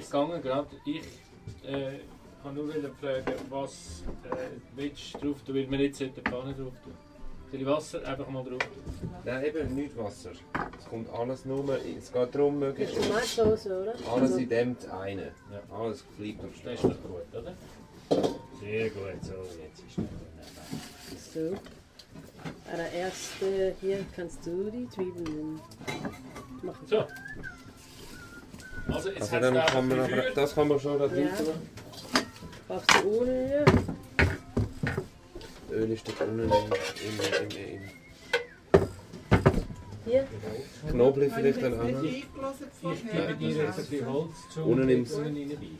der hüttenwart und die anderen, im goli am grabserberg